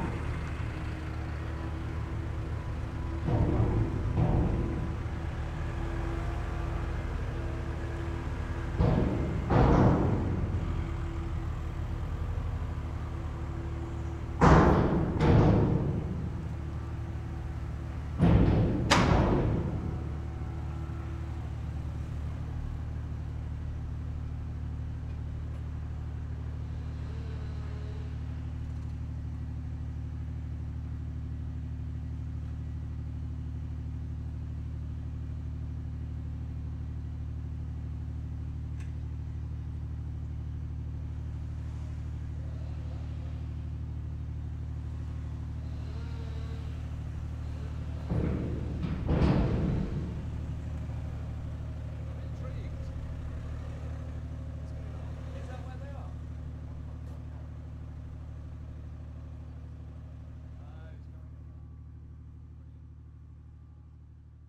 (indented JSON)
{
  "title": "Trelissick Landing for King Harry Ferry, UK - King Harry ferry arriving at Trelissick",
  "date": "2013-03-05 16:00:00",
  "description": "Recorded on Falmouth University Field Trip with students from Stage 2 'Phonographies' module:\nSoundfield SPS200 recorded to Tascam DR-680, stereo decode",
  "latitude": "50.22",
  "longitude": "-5.03",
  "altitude": "22",
  "timezone": "Europe/London"
}